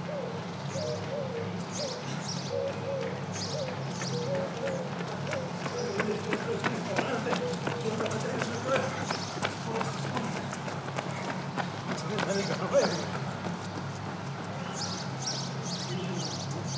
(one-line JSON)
{"title": "Rijeka, HomoSiTeć 2010", "description": "Homo Si Teć 2010, international half marathon and other ppls race discipline", "latitude": "45.33", "longitude": "14.42", "altitude": "7", "timezone": "Europe/Berlin"}